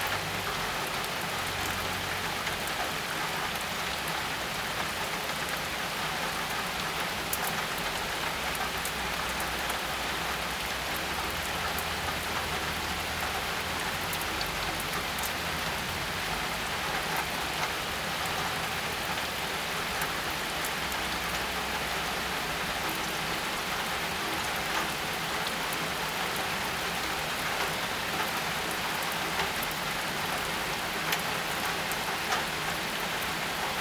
Solesmeser Str., Bad Berka, Germany - Rain in the Neighborhood - Binaural
Binaural recording with Soundman OKM and Zoom F4 Field Recorder. Best experienced with headphones.
Baseline rain drops far and near increasing in tempo from the 6th minute. Occasional vehicular engines. A dog barks in the soundscape.
Thüringen, Deutschland